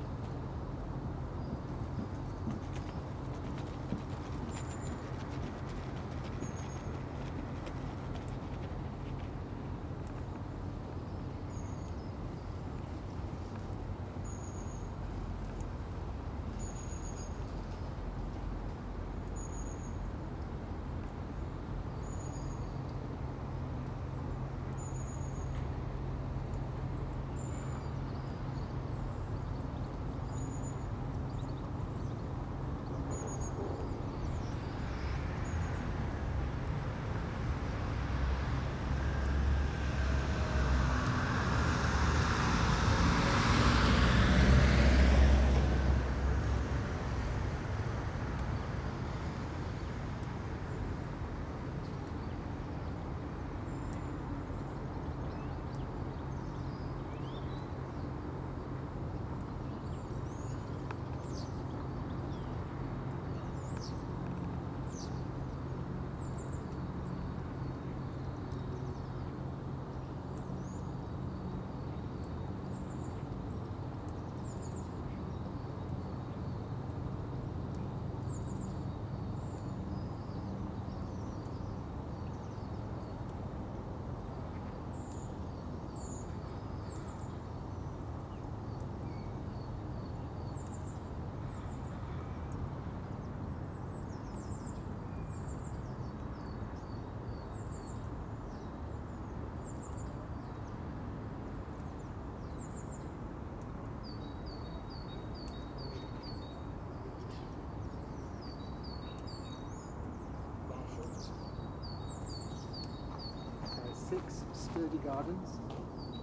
Contención Island Day 38 outer east - Walking to the sounds of Contención Island Day 38 Thursday February 11th
The Poplars High Street Moorfield Little Moor Jesmond Dene Road Osborne Road Mitchel Avenue
Traffic slowed
by snow
and traffic lights
Women sit in cars
talking on phones
A long-tailed tit
flies across the road
pulling its tail behind it
A runner
running with care
England, United Kingdom, 11 February 2021, 10:04